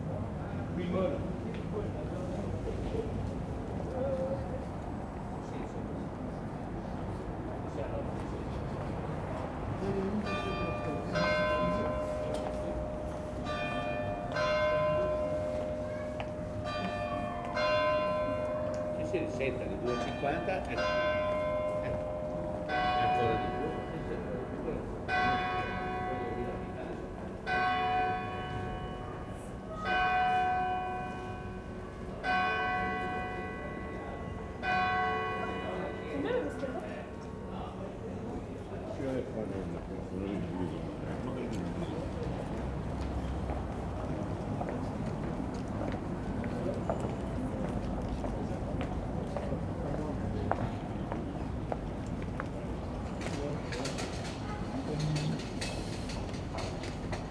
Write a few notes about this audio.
Lyon, Cathédrale Saint-Jean, an old minidisc recording from 1999.